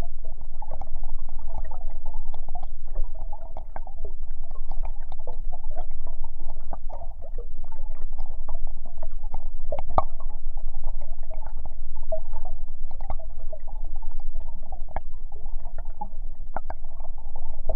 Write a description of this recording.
Metallic pipe - a part of improvised bridge - listened through geophone.